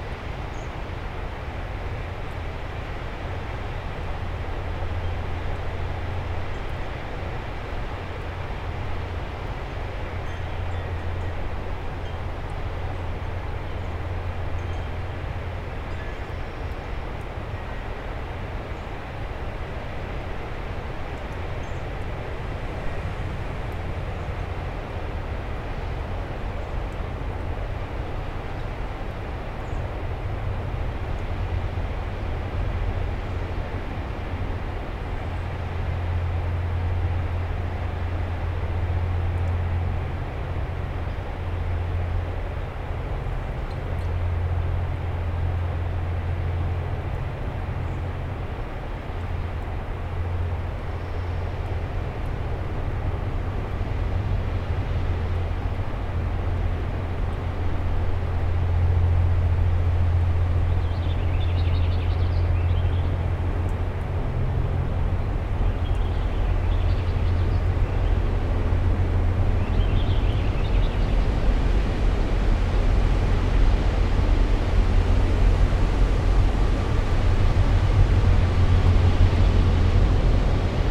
Columbia Gorge train and wind noise
a windy day in the gorge makes the passing trains blend in
Skamania, Washington, United States of America